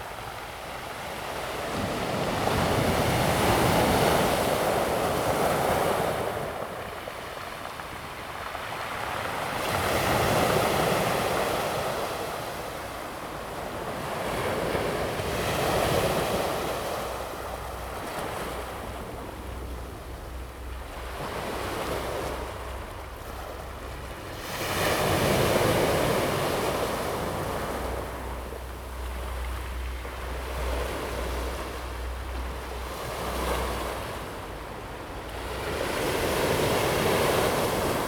Hualien City, Taiwan - sound of the waves
sound of the waves
Zoom H2n MS+XY +Sptial Audio
Hualien City, 花蓮北濱外環道, 19 July 2016